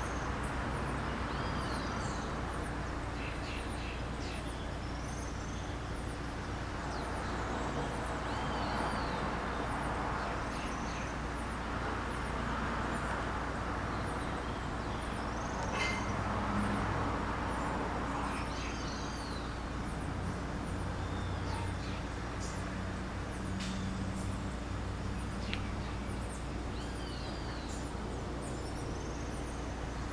São Domingos, Niterói - Rio de Janeiro, Brazil - Birds in the area. Pássaros na área.
Domingo. Acordo de manhã cedo e os pássaros cantam na área. Gravo.
Sunday morning and the birds are singing in the area. I record.